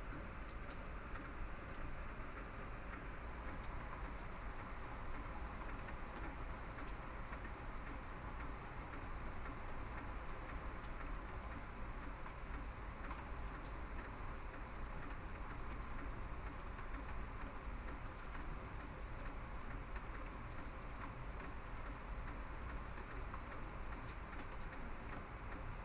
rain in house
愛知 豊田 rain